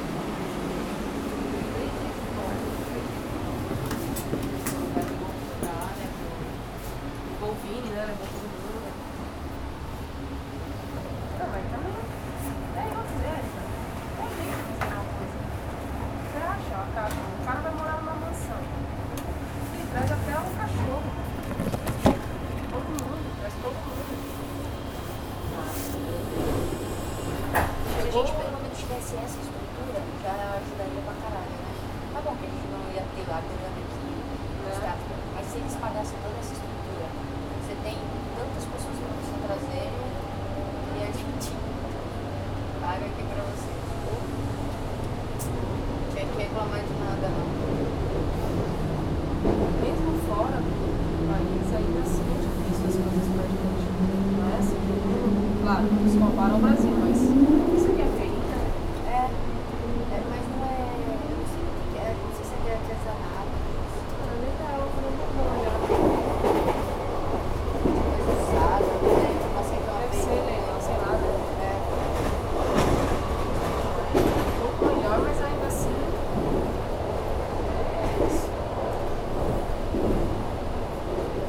Chatou, France - Taking the train in Chatou station
Taking the train in the Chatou station. A group of young students jokes with a bottle of water.
23 September